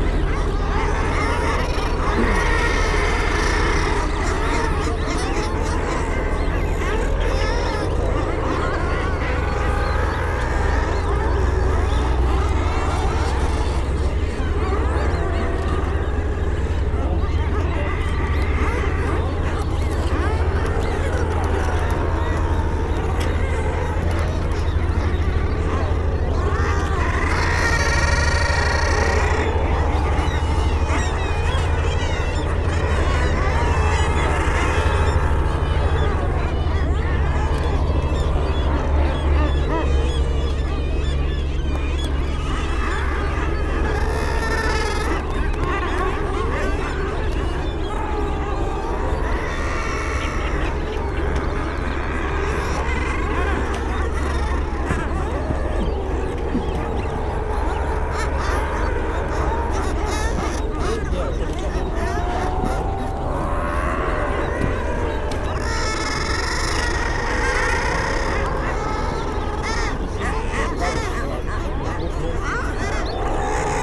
North Sunderland, UK - guillemot colony ...
Staple Island ... Farne Islands ... wall to wall nesting guillemots ... background noise from people ... boats ... planes ... cameras ... bird calls from kittiwakes ... oystercatchers ... razor bills ... initially a herring gull slips between the birds causing consternation ... warm sunny day ... parabolic reflector ...